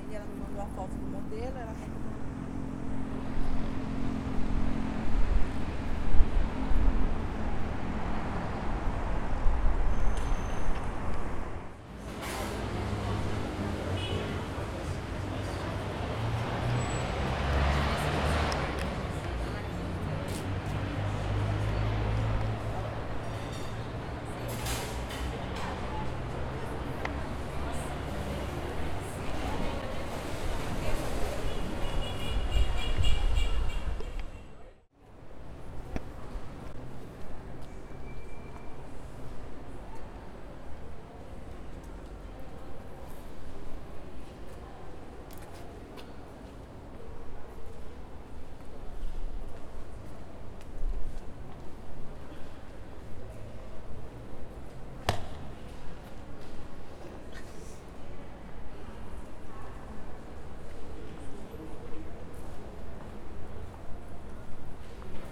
Rua Vergueiro - Paraíso, São Paulo - SP, 01504-001, Brasil - Centro Cultural São Paulo (CCSP)
Paisagem Sonora do Centro Cultural São Paulo, gravado por estudantes de Rádio, TV e Internet
Sexta Feira, 12/04/2019
12 April, 15:02